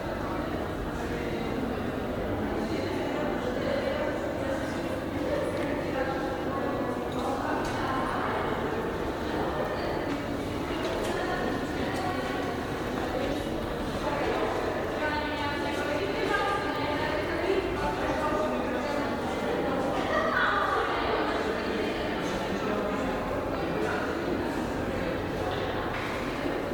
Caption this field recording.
Ambiance of corridor in the library.